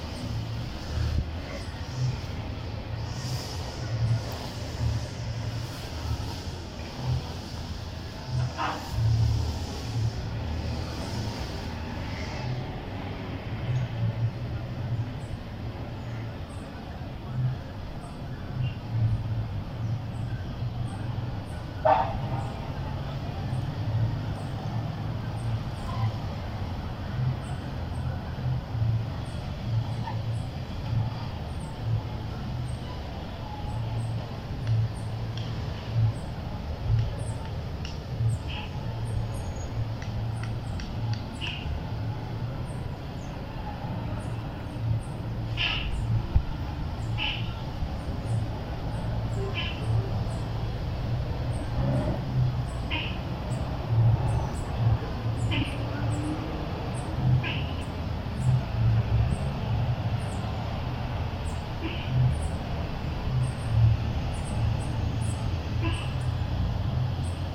Cl., Medellín, Antioquia, Colombia - Bosque
Información Geoespacial
(latitud: 6.257845, longitud: -75.626262)
Bosque San Cristóbal
Descripción
Sonido Tónico: pájaros sonando, carros pasando
Señal Sonora: Guadaña podando
Micrófono dinámico (celular)
Altura: 2,00 cm
Duración: 3:00
Luis Miguel Henao
Daniel Zuluaga
7 November 2021